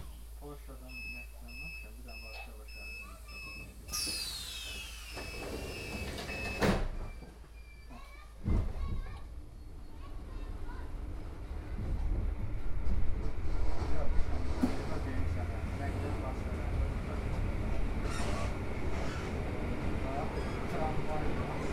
Rail tracks, Katowice, Poland - (822) Old train on clickety-clack tracks
Recording of a train from the inside with recorder placed on a shelf.
Recorded with UNI mics of Tascam DR100mk3
województwo śląskie, Polska, 27 June 2021, 14:57